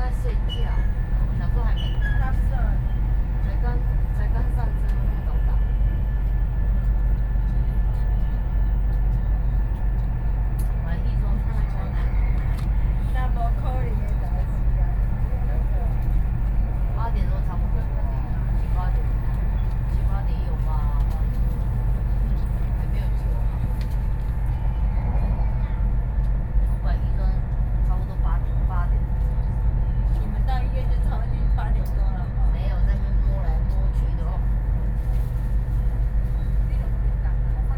Yanchao, Kaoshiung - high-speed rail
inside of the high-speed rail, Sony PCM D50 + Soundman OKM II
14 April 2013, ~22:00